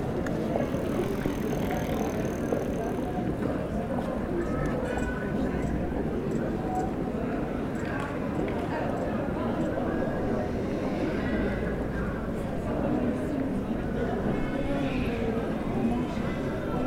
Rue Prte Reine, Chambéry, France - Place St Léger
Place St Léger au pied de la fontaine non activée, beaucoup de monde sur les terrasses de bars avec le beau temps.